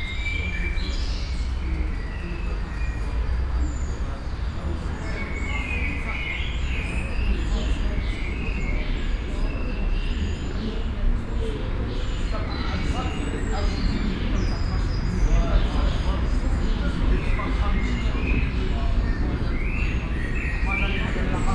Brussels, Parc Rue Marconi.
By JM Charcot.